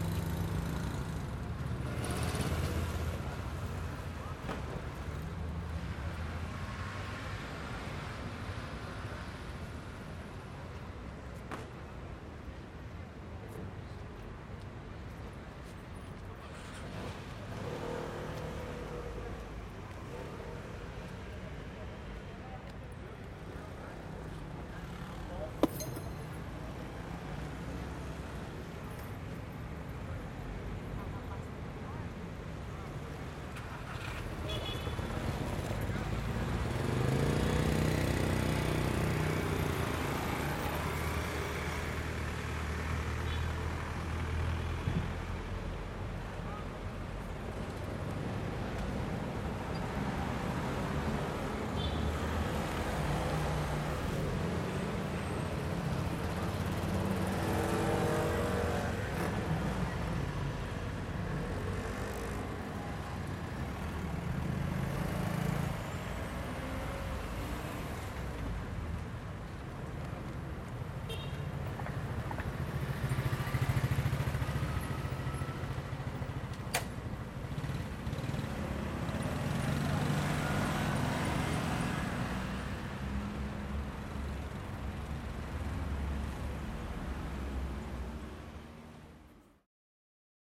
Μιχαήλ Καραολή, Ξάνθη, Ελλάδα - Mpaltatzi Square/ Πλατεία Μπαλτατζή 12:45
Medium traffic, people passing by.
Περιφέρεια Ανατολικής Μακεδονίας και Θράκης, Αποκεντρωμένη Διοίκηση Μακεδονίας - Θράκης, 12 May